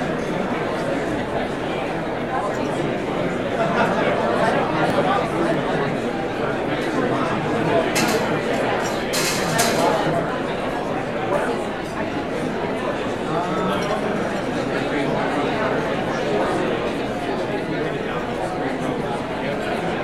The sound level gradually builds as a big corporate lunch room fills up. What begins as individual diners morphs into an amorphous sea of white noise, a comforting wash of undifferentiated humanity.
Major elements:
* Patter
* Dishes, glasses and silverware
* Chairs and trays
* Ice dispenser
* Microwave ovens
* A cellphone
* One diner realizes she's being recorded
King County, Washington, United States of America, 26 October